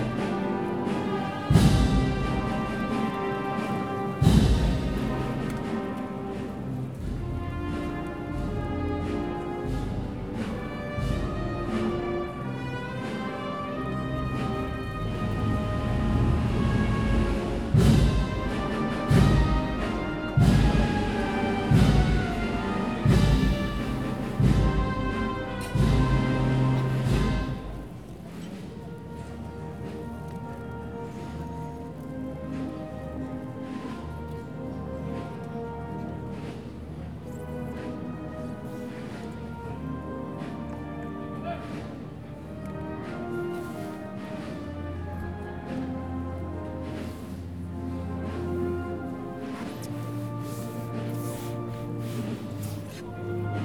Plaza Molviedro, Sevilla, Spain - Semana Santa 2018 - Hermandad de la Soledad de San Buenaventura
Semana Santa 2018. Brotherhood - Hermandad de la Soledad de San Buenaventura. The recording start as the Paso carrying Mary enters the square and is set down in front of the open doors of Capilla del Mayor Dolor to say hello to the Pasos within. As well as the band at 4:24 you hear a woman serenade (sing a siete) the Paso from a balcony, a few seconds beofre that you hear a knock, which is the signal to set the Paso down. At 9:17 you hear a knock, this is the signal to get ready to lift the Paso, at 9:27 you hear them lift it, and then move on.
Recorder - Zoom H4N.